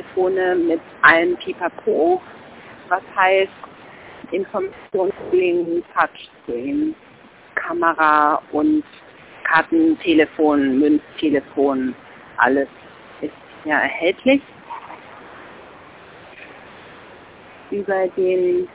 Münzfernsprecher Hasenheide/Hermannplatz - Erstaunliche Informationen 20.08.2007 17:15:47